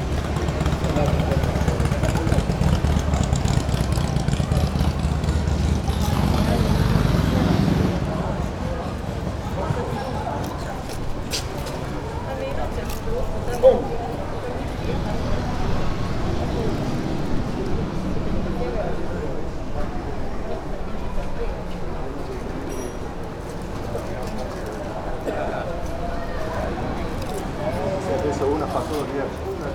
Via di Cavana, Trieste, Italy - monday early evening